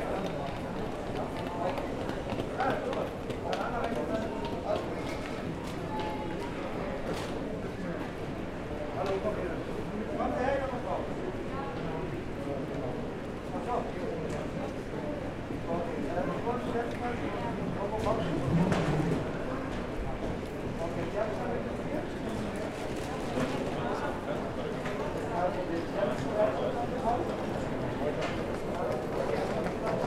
Frankfurt (Main) Flughafen Regionalbahnhof, Hugo-Eckener-Ring, Frankfurt am Main, Deutschland - Corona Test STation
A new moment at the airport in September 2020 was the Corona Test Station, where travellers could make a test after coming from anohter area. It is heard how people are explaining how the procedure is functioning, where they get the result of the test, other travellers are discussing in chinese and other languages.